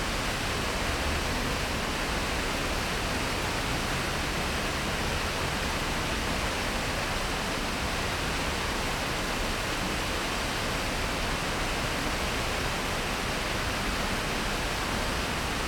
{"title": "Fontaine de la gare de Bourges", "date": "2010-09-17 12:13:00", "description": "Fontaine de la gare sncf de Bourges\ndesign : arbre métallique lumineux\nleau est aspirée dans un gouffre", "latitude": "47.09", "longitude": "2.39", "timezone": "Europe/Berlin"}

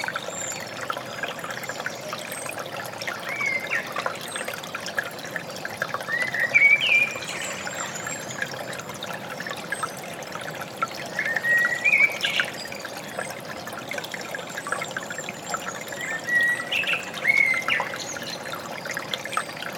{"title": "Chilcompton, Radstock, Somerset, UK - Woodland birdsong", "date": "2016-05-02 11:41:00", "description": "Sound of small stream with woodland birds. Sony PCM-D50", "latitude": "51.25", "longitude": "-2.52", "altitude": "183", "timezone": "Europe/London"}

